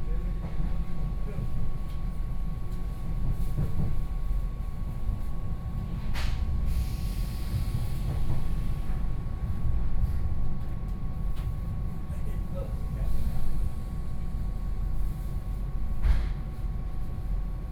New Taipei City, Taiwan - On the train